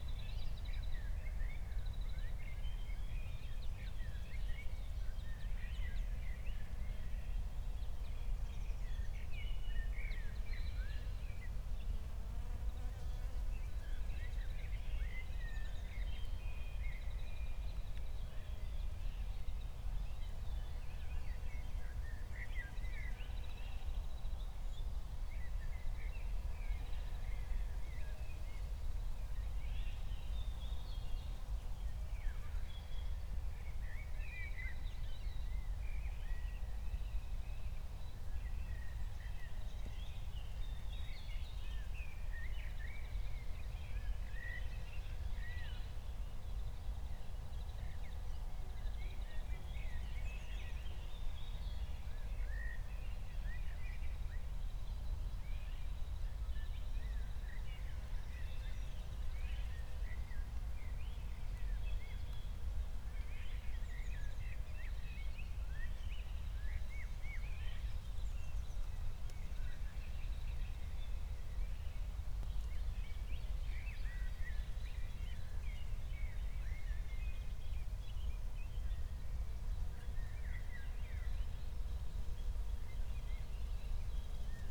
Berlin, Buch, Mittelbruch / Torfstich - wetland, nature reserve
18:00 Berlin, Buch, Mittelbruch / Torfstich 1